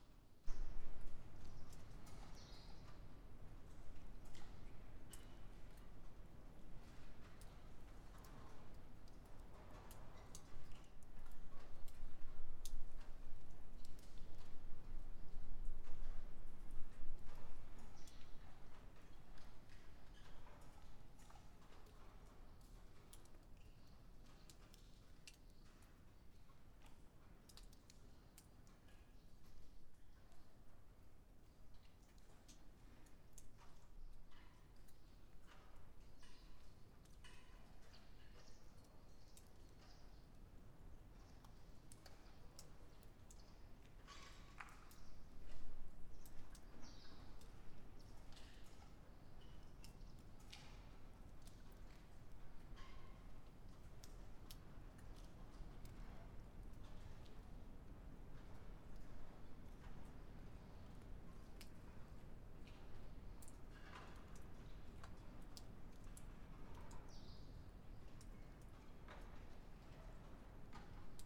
Buzludzha, Bulgaria, inside hall - Buzludzha, Bulgaria, large hall 1
Buzlduzha, "House of the Communist Party", is now a ruin with a lot of sounds. The roof is incomplete, water is dropping, but the acoustic of the hall is still audible by the distant echos